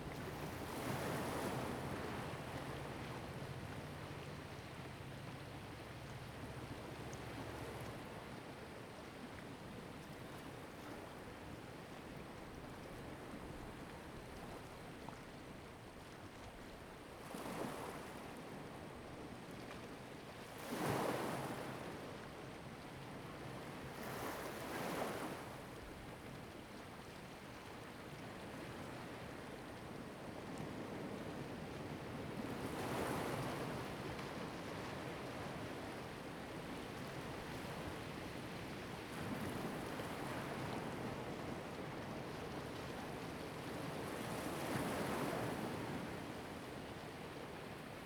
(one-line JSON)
{"title": "Jiayo, Koto island - sound of the waves", "date": "2014-10-29 09:37:00", "description": "On the coast, sound of the waves\nZoom H2n MS +XY", "latitude": "22.06", "longitude": "121.51", "altitude": "6", "timezone": "Asia/Taipei"}